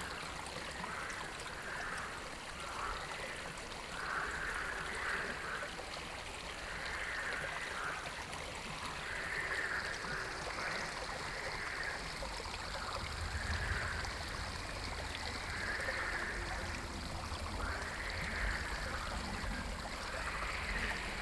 Beselich Niedertiefenbach - night ambience with frogs
frog concert at night, within the village, quite unusual, never heard this here before.
(Sony PCM D50, DPA4060)